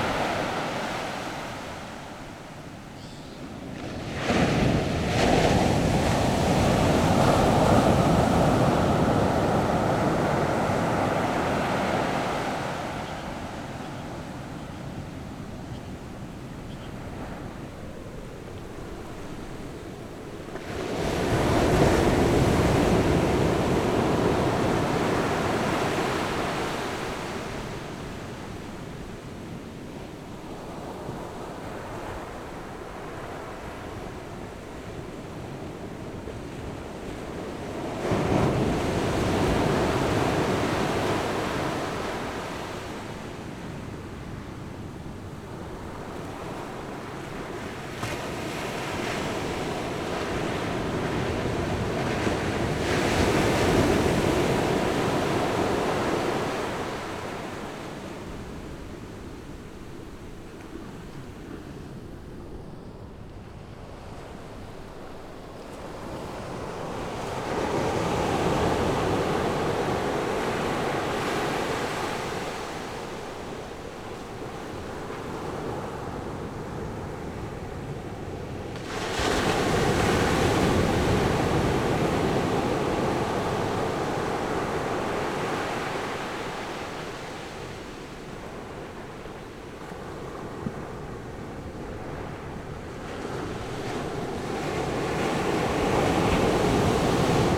塘后道沙灘, Beigan Township - In the beach
Sound wave, In the beach
Zoom H6 +Rode NT4